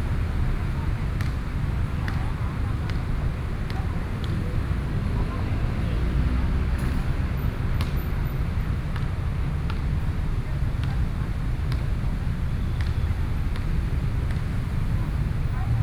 Taoying Rd., Taoyuan City - In the park
in the Park, Traffic Noise, Woman talking, Play basketball, Sony PCM D50 + Soundman OKM II